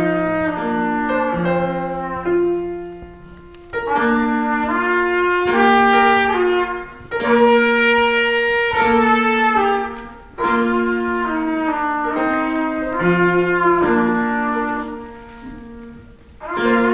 refrath, waldorfschule, aula, vorspiel
alljährliches klavier vorspiel der Klavierschüler in der schulaula. hier duett trompete und klavier - "Alle Jahre wieder"
soundmap nrw - weihnachts special - der ganz normale wahnsinn
social ambiences/ listen to the people - in & outdoor nearfield recordings